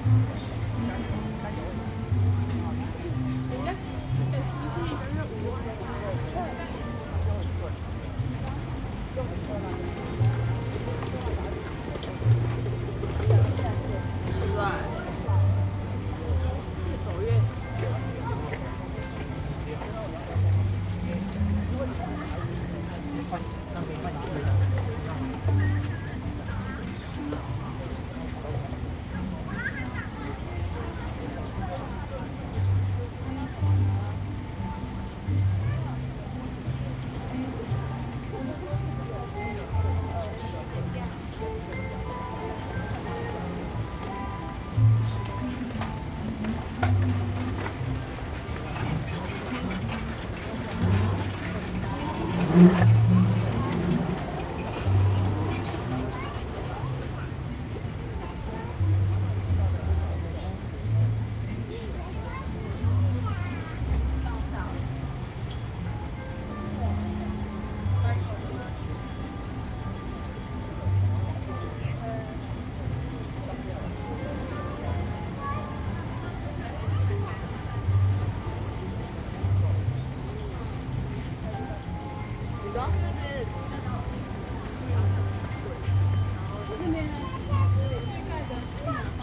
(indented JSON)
{
  "title": "榕堤, Tamsui Dist., New Taipei City - Sitting next to the river bank",
  "date": "2015-08-24 17:06:00",
  "description": "Sitting next to the river bank, Sound wave, The sound of the river, Footsteps\nZoom H2n MS+XY",
  "latitude": "25.17",
  "longitude": "121.44",
  "altitude": "7",
  "timezone": "Asia/Taipei"
}